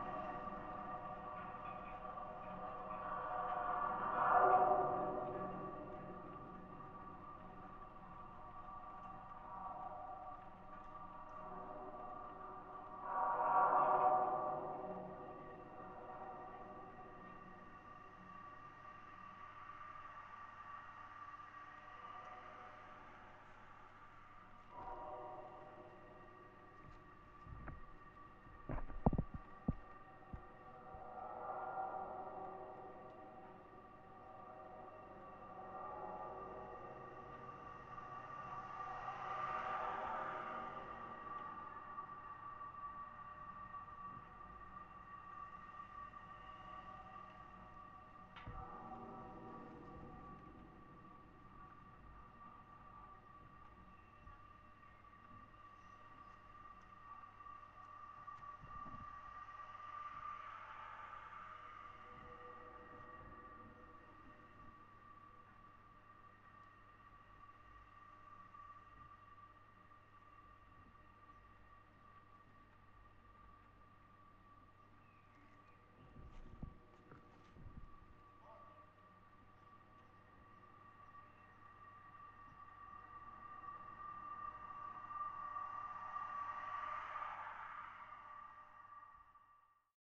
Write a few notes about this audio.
hidden sounds, contact mics on a hollow metal pole holding up the wires that power trams outside Tallinns main train station